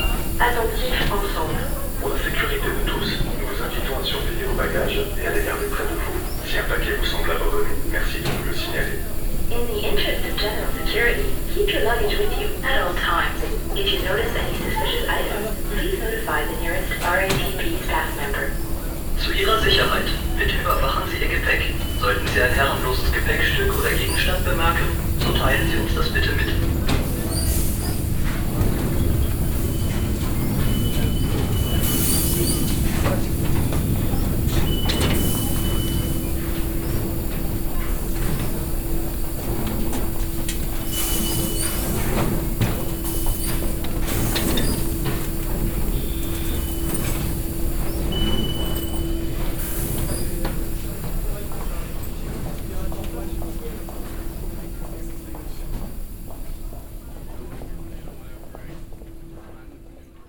ticket passage with pneumatic doors at the exit of the subway station - people passing by - an safety anouncement
international city scapes - social ambiences and topographic field recordings
Paris, France